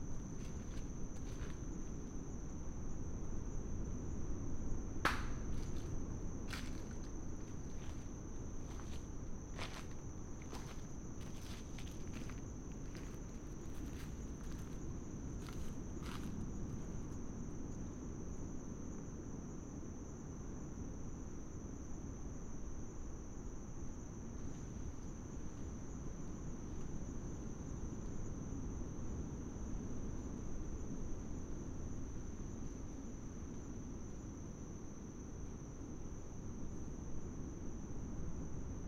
Coastal Forest, Atiu Cookinseln - Coastal Rain Forest at night, no rain.
Soundscape of the coastal rainforest at night. The forest is unique on Atiu with a lush vegetation and a closed canopy. The squealing calls in the recording are from a chattering Kingfisher, a bird species endemic to the Cook Islands. Otherwise there is a host of insects, twigs and leaves cracking and/or falling and of course in the background the ever present roar of waves on the outer reef. Recorded with a Sound Devices 702 field recorder and a modified Crown - SASS setup incorporating two Sennheiser mkh 20 microphones.
Kūki Āirani, 21 July 2012, 21:43